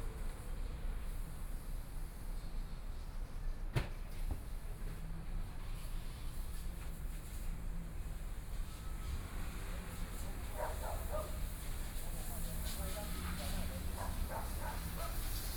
Minzu E. Rd., Zhongshan Dist. - walking on the Road
Dogs barking, Traffic Sound, Various types of automotive shop, Binaural recordings, Zoom H4n+ Soundman OKM II
Zhongshan District, Taipei City, Taiwan, 20 January, 14:39